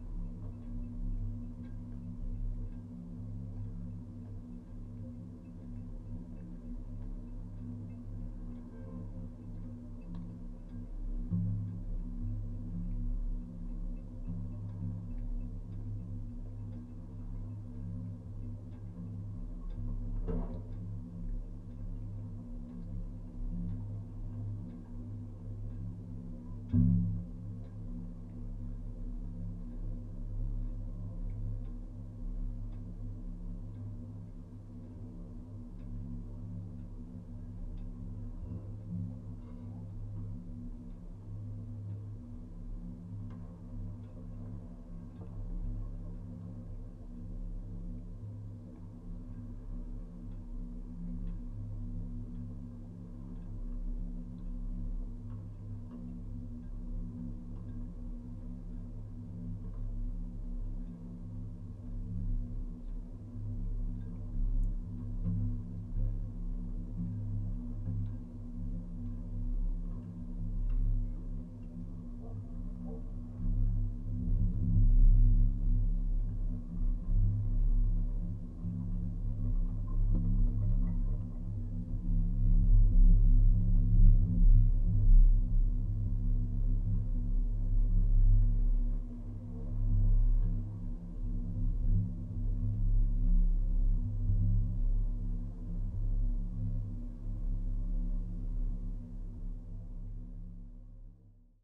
Lunenburg County, NS, Canada - Wind and waves resonating inside the metal jetty support 2
This jetty is built with large hollow metal pipes providing the main supports. Usually they are made from solid wood. The gentle wind and waves resonate inside the pipe taking on the frequencies and harmonics given by its dimensions, which are slightly different from the other pipe supports.
10 October 2015, ~17:00